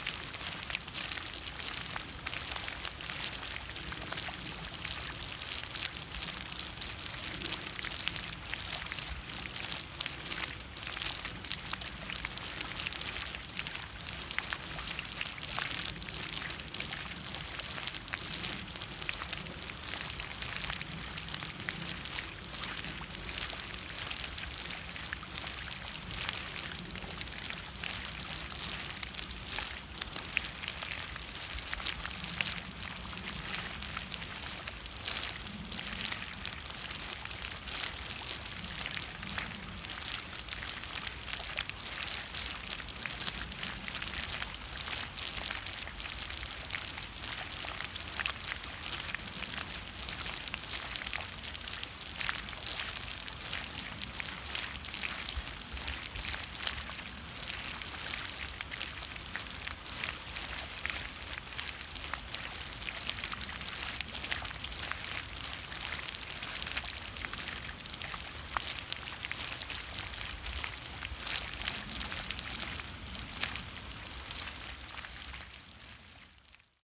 Vyskov, Czech Republic - Black water spraying from a leak in the rusty pipes
Rusty pipes carry dirty water to the settling pools pass here now covered with course grass. The pipe has sprung a leak.